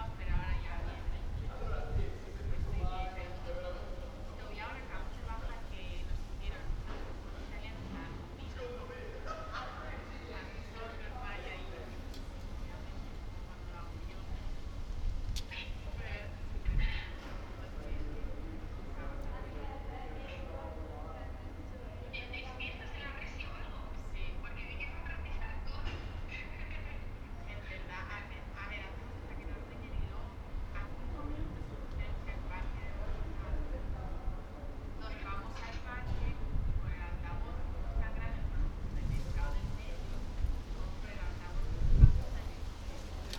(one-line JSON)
{"date": "2020-06-05 15:43:00", "description": "\"Friday afternoon June 5th with less laughing students and wind in the time of COVID19\" Soundscape\nChapter XCVIII of Ascolto il tuo cuore, città. I listen to your heart, city\nFriday June 5th 2020. Fixed position on an internal terrace at San Salvario district Turin, eighty-seven days after (but day thirty-three of Phase II and day twanty of Phase IIB and day fourteen of Phase IIC) of emergency disposition due to the epidemic of COVID19.\nStart at 3:43 p.m. end at 4:09 p.m. duration of recording 25’46”", "latitude": "45.06", "longitude": "7.69", "altitude": "245", "timezone": "Europe/Rome"}